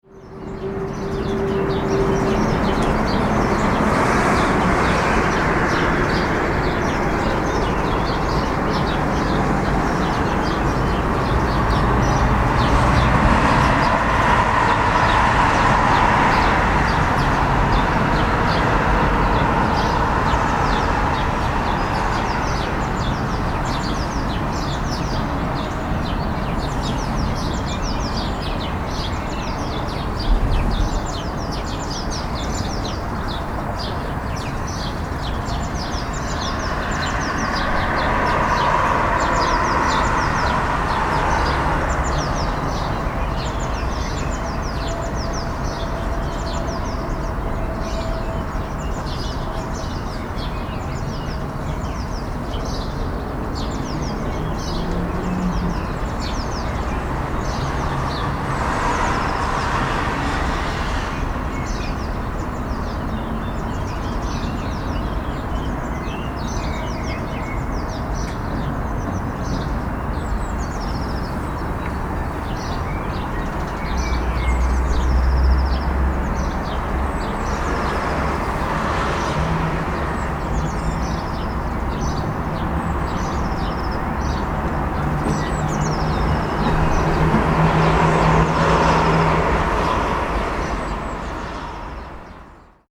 straßenatmo, vogelgesang, straßenbahn, autos
Baden-Württemberg, Deutschland